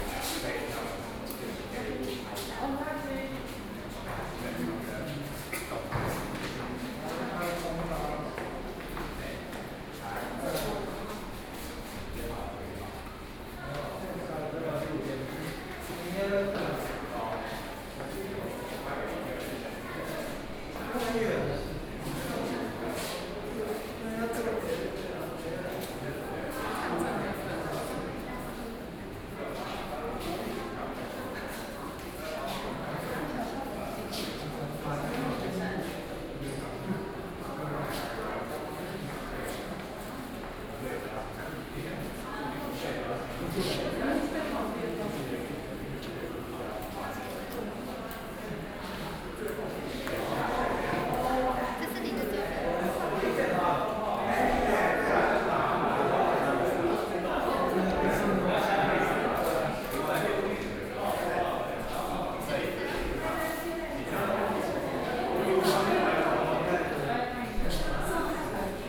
VTartsalon, Taipei - Exhibition Opening
Exhibition Opening, Sony PCM D50 + Soundman OKM II